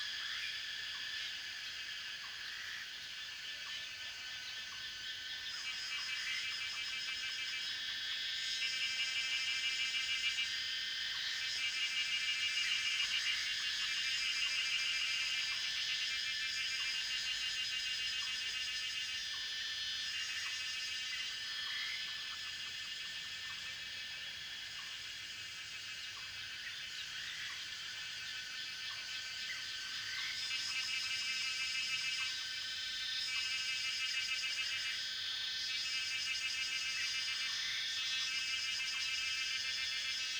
中路坑, 桃米里, Puli Township - Cicada and Bird sounds
Cicada sounds, Bird sounds, Frogs chirping
Zoom H2n Spatial audio
Nantou County, Puli Township, 機車道, 6 June 2016, ~18:00